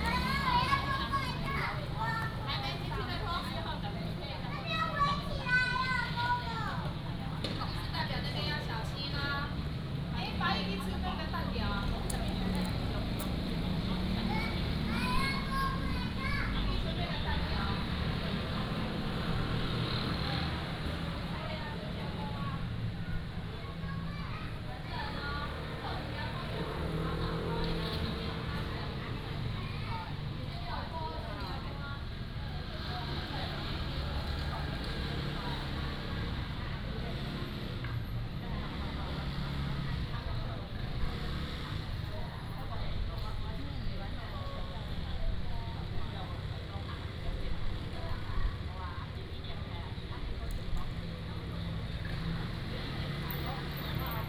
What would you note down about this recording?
In the street, In front of the traditional architecture, Traffic Sound